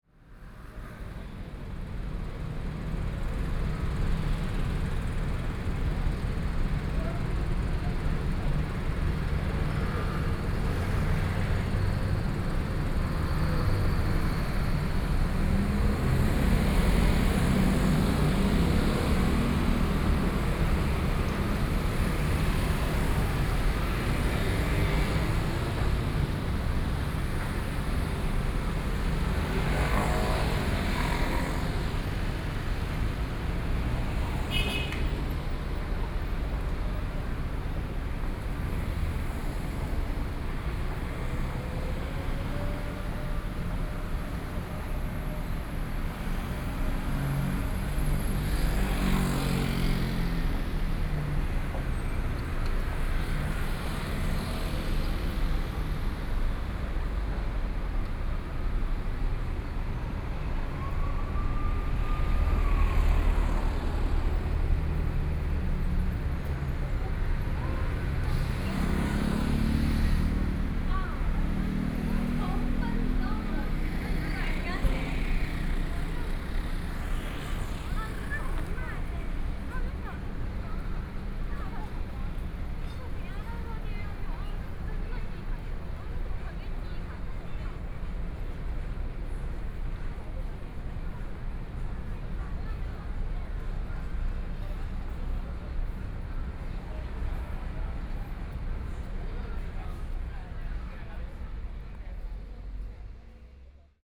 Hsinchu City - Intersection Traffic Noise
Intersection, Traffic Noise, Binaural recordings